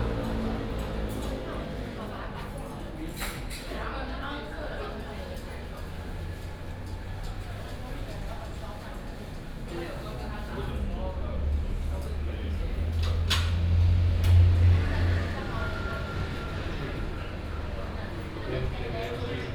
廟口牛肉麵, Xihu Township - In the beef noodle shop
In the beef noodle shop, Traffic sound